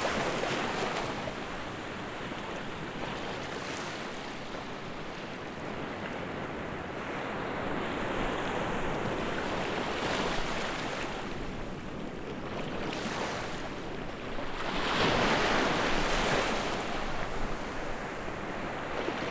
A wonderful Sunny day on an island in the middle of the Indian ocean. Sound recorded on a portable Zoom h4n recorder